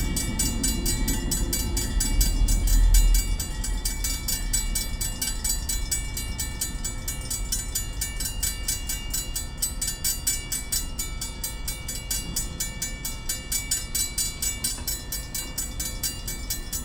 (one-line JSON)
{"title": "Canal St. crossing, Chicago IL - Metra railroad crossing, single locomotive pass", "date": "2009-08-21 17:38:00", "latitude": "41.89", "longitude": "-87.64", "altitude": "178", "timezone": "Europe/Berlin"}